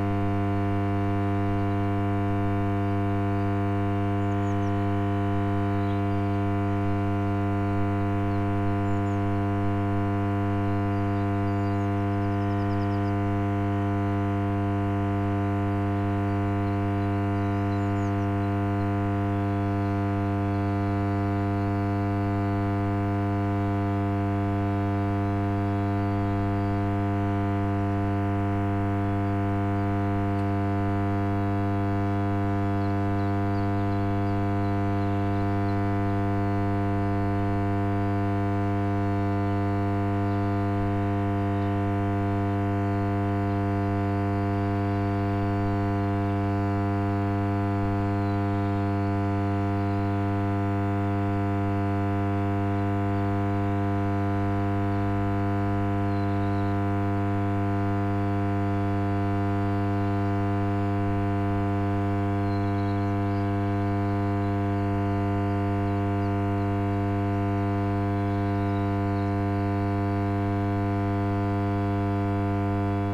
Univeristy Campus, Cieszyn, Poland - (115 BI) Transformer buzzing
.... if to place the microphone in a different place (like a near transformer) the sound perspective obviously drastically changes...
Recorded with Sony PCM D100
powiat cieszyński, województwo śląskie, Polska, 1 May 2017, 12:47